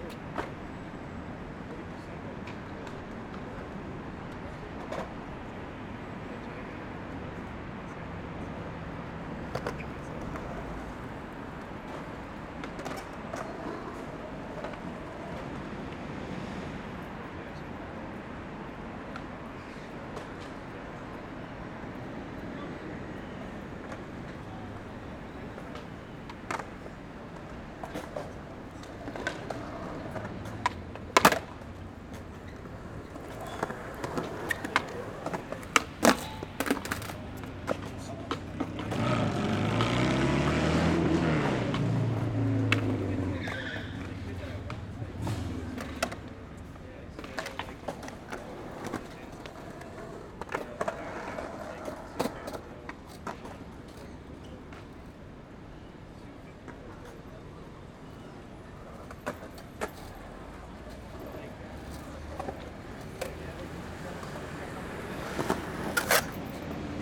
N Moore St, New York, NY, USA - Tribeca Skatepark, Pier25
Tribeca Skatepark, Hudson River Park Pier 25
21 June 2019, ~15:00